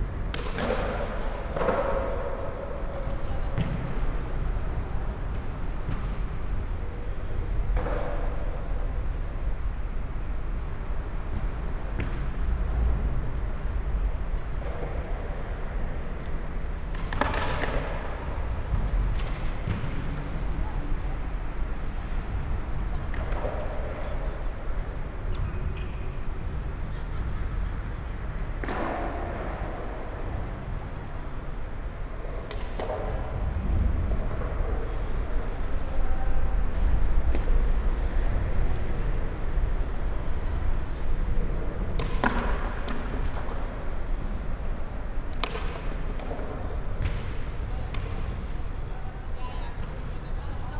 Zoobrücke, Deutz, Cologne, Germany - skaters, BMX biker, traffic drone
Köln Deutz, under the bridge (Zoobrücke), listening to skaters, bikers and deep drone of the traffic above
(Sony PCM D50, Primo EM172)